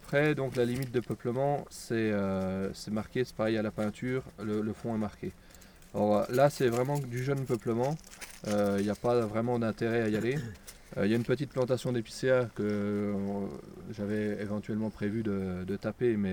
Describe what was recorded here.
Consigne de l'ONF avant martelage des parcelles 46 & 47 de la réserve naturelle du grand ventron.